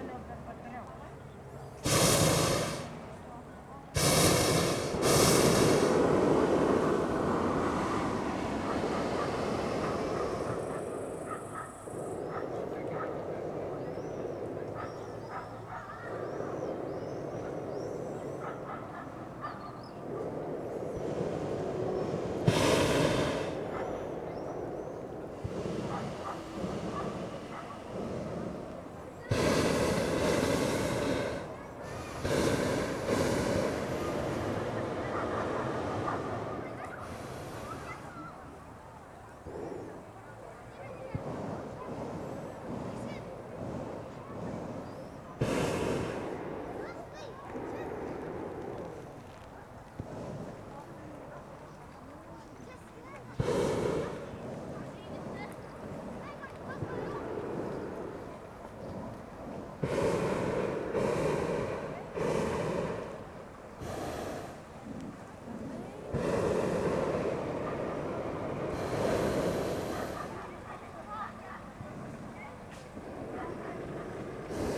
Lithuania, Utena, hot air balloons over city
sounds from Lithuanian XIX hot air balloons championship